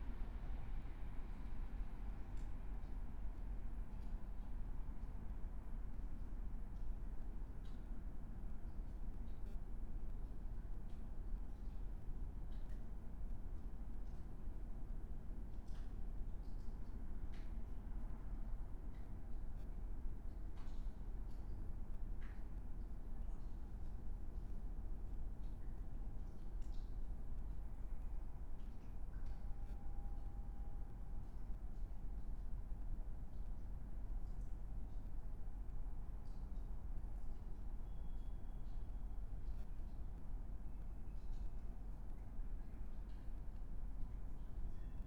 river Traun railway bridge, Linz - under bridge ambience
00:23 river Traun railway bridge, Linz
10 September 2020, 00:23